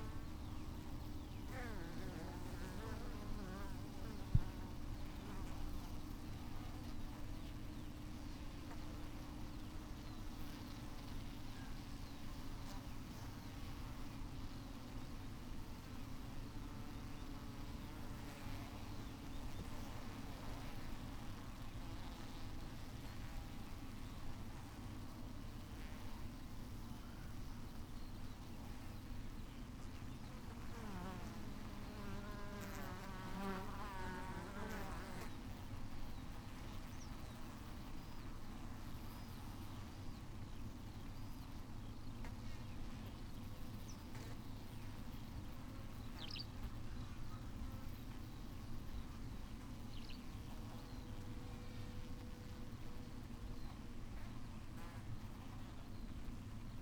Green Ln, Malton, UK - bee swarm ...
bee swarm ... SASS to Zoom F6 ... bees swarming on the outside of one of the hives ...
England, United Kingdom, 10 July 2020, 06:27